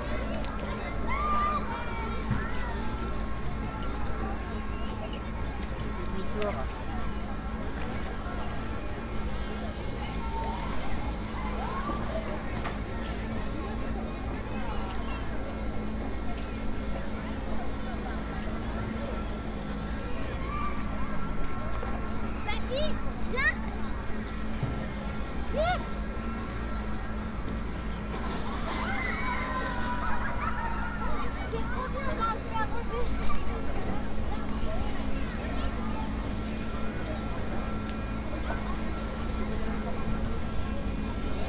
Les Machines de lîle, Nantes, France - (603) Les Machines de lîle
Binaural recording of Les Machines de l'île.
recorded with Soundman OKM + Sony D100
sound posted by Katarzyna Trzeciak
Pays de la Loire, France métropolitaine, France, 22 August